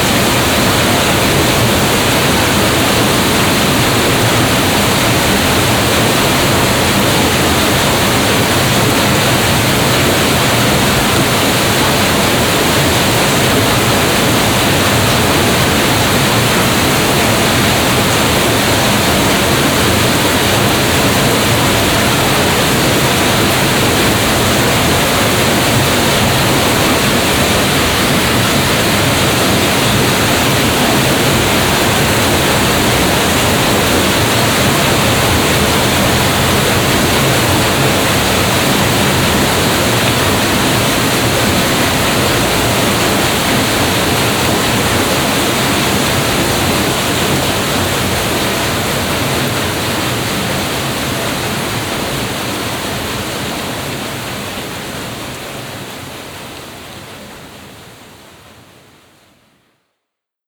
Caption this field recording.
Another recording of a water wheel. This time the wheel is inside the building. soundmap d - social ambiences, water sounds and topographic feld recordings